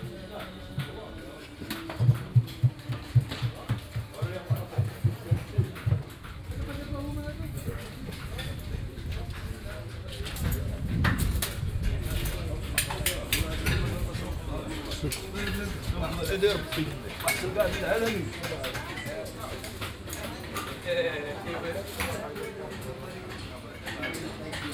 Souk Haddadine, Marrakesch, Marokko - metal workers, ambience
the souk of the metal worker seems to be separated in two areas, one for making the goods, the other one for selling them. the former is indeed more private and less decorative. however, workers are busy all over the place.
(Sony PCM D50, OKM2)
Marrakesh, Morocco, 26 February, 14:10